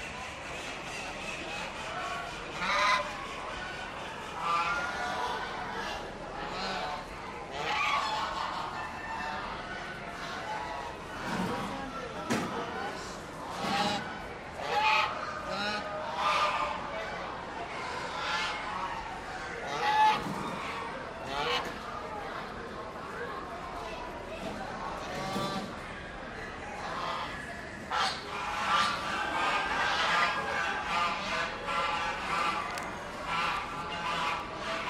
Kansas State Fairgrounds, E 20th Ave, Hutchinson, KS, USA - Southwest Corner, Poultry Building
A Chinese white goose talks and eats. Other poultry are heard in the background. Stereo mics (Audiotalaia-Primo ECM 172), recorded via Olympus LS-10.
9 September, 15:57